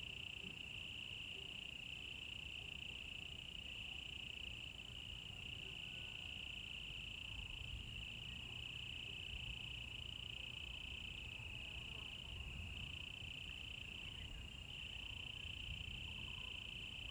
桃米里, 埔里鎮 Puli Township - Insects sounds
Insects sounds
Zoom H2n MS+XY